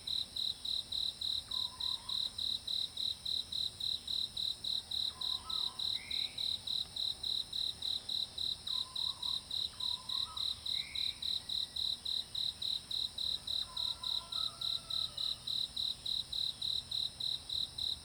Shuishang Ln., 桃米里 - In the bush
Early morning, Bird sounds, Insect sounds, In the bush
Zoom H2n MS+XY